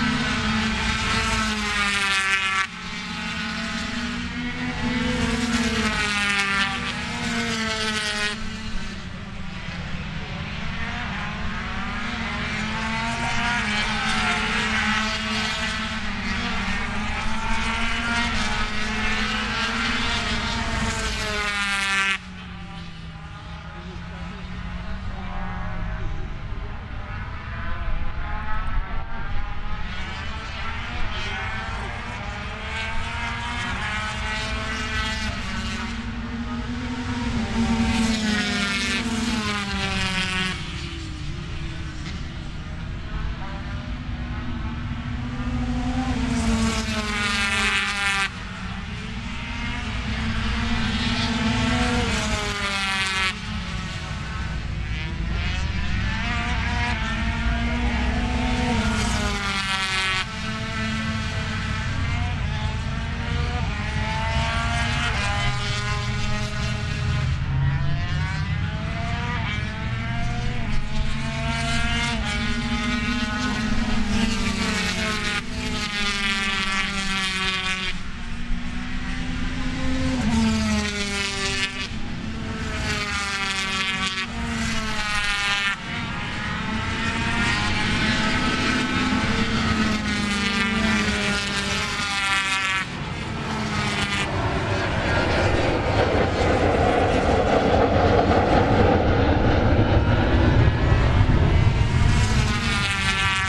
British Motorcycle Grand Prix 2003 ... free practice ... one point stereo mic to minidisk ... quite some buffeting ... time approx ...
Derby, United Kingdom, 11 July 2003